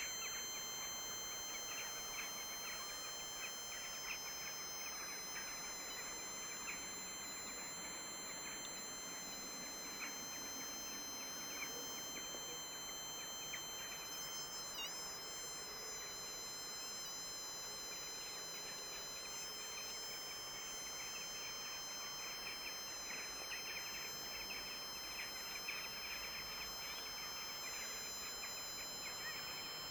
Utena, Lithuania, RF metering
I am standing in the midle of the frozen lake with Trifield TF2 EMF meter. The RF radiation exceeds all health norms...
Utenos rajono savivaldybė, Utenos apskritis, Lietuva, 25 January, 17:40